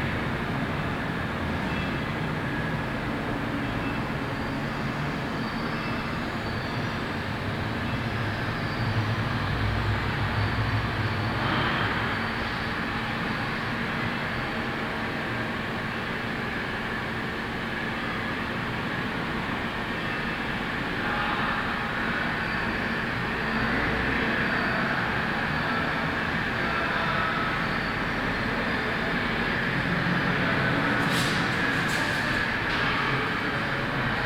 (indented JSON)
{
  "title": "Stadtkern, Essen, Deutschland - essen, forum for art & architecture, exhibition",
  "date": "2014-06-17 15:30:00",
  "description": "Inside the ground floor exhibition hall of the forum for art and architecture during the intermedia sound art exhibition Stadtklang//: Hörorte. Excerpt of the sound of the multi-channel composition with sound spaces of the city Essen.\nProjekt - Klangpromenade Essen - topographic field recordings and social ambience",
  "latitude": "51.46",
  "longitude": "7.01",
  "altitude": "81",
  "timezone": "Europe/Berlin"
}